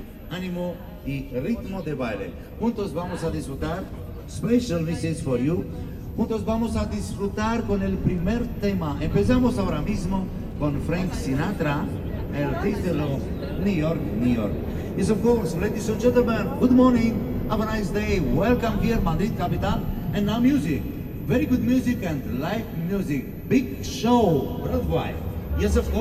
Madrid, subway, line - man sining new york, new york
one of many subway performers entertaining passengers with frank sinatra's "new york, new york".
30 November 2014, 12:15